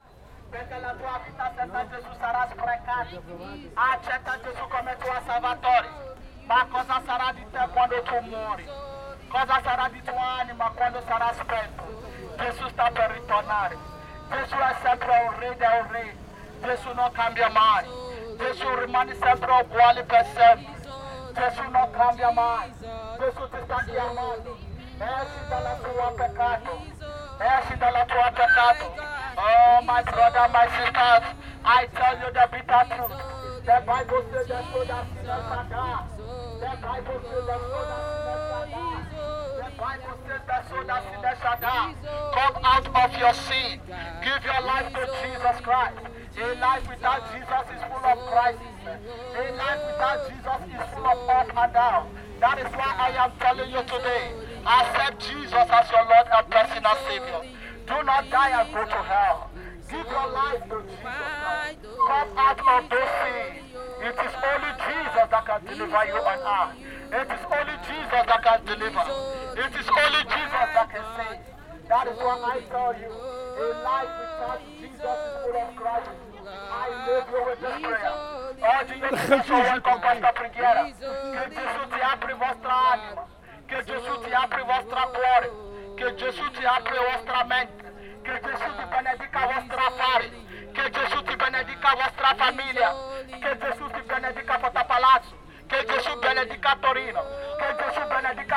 Torino Corso Regina Margherita, Torino TO, Italie - Turin - Prédicatrice
Turin - Italie
À l'entrée du marché - prédicatrices : "Give your life to Jesus Christ ! Do not die and go to hell !"